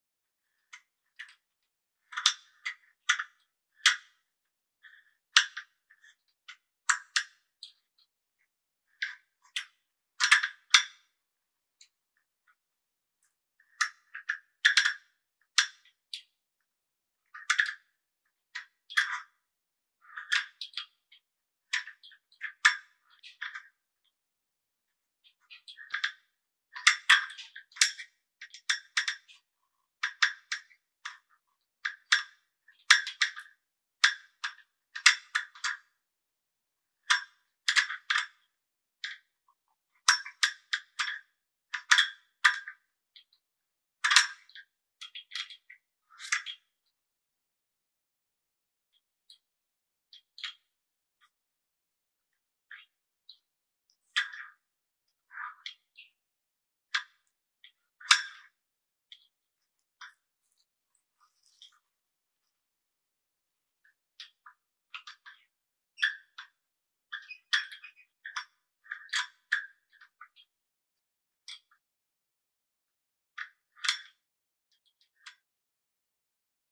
Kuhbrückenstraße, Hameln, Germany FLAG WIRES (Sounds Of Metal Flag Wires) - FLAG WIRES (Sounds Of Metal Flag Wires)
As I cycled past that path several times, I heard, when there was a little more wind, how the wires on the metal rods holding the flags generated sounds as the wires marched against those metal rods on which the flags were.
ZOOM H4n PRO
Internal Stereo Cardioid Microphones
Niedersachsen, Deutschland